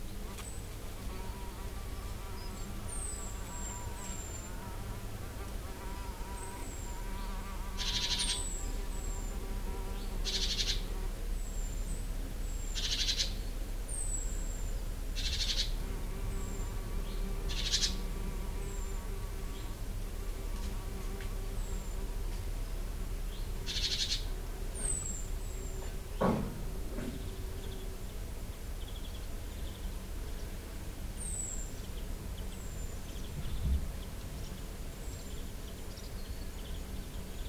Arroyo de Humaina, Malaga, Spain - Morning by Humaina hotel
Morning outside of Humaina hotel - birds (Great Tit, Blue Tit, Blackbird), some sounds from downstairs kitchen.